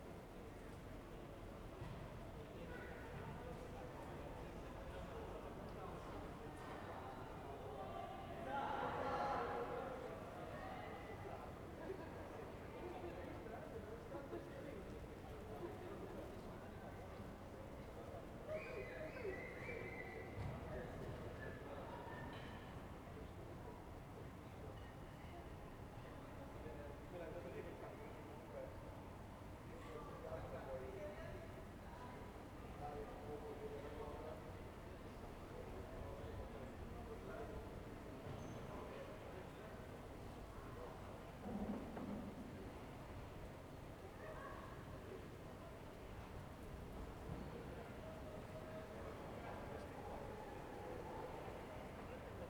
Ascolto il tuo cuore, città. I listen to your heart, city. Several chapters **SCROLL DOWN FOR ALL RECORDINGS** - Terrace May 5th afternoon in the time of COVID19 Soundscape
"Terrace May 5th afternoon in the time of COVID19" Soundscape
Chapter LXVII of Ascolto il tuo cuore, città. I listen to your heart, city
Tuesday May5th 2020. Fixed position on an internal terrace at San Salvario district Turin, fifty six days (but second day of Phase 2) of emergency disposition due to the epidemic of COVID19
Start at 2:10 p.m. end at 2:57 p.m. duration of recording 47'17''
Torino, Piemonte, Italia, 5 May 2020, 14:10